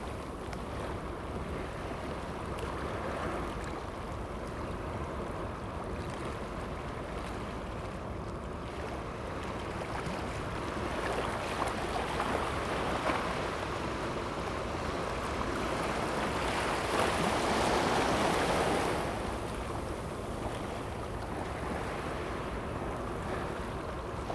Siziwan, Gushan District, Kaohsiung - the waves
Sound of the waves, Beach
Zoom H2n MS+XY
Kaohsiung City, Taiwan, 22 November 2016